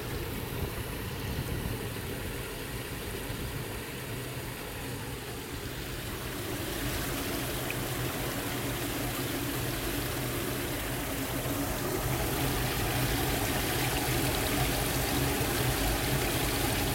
koeln, street after heavy rain
recorded june 22nd, 2008, around 10 p. m.
project: "hasenbrot - a private sound diary"
Cologne, Germany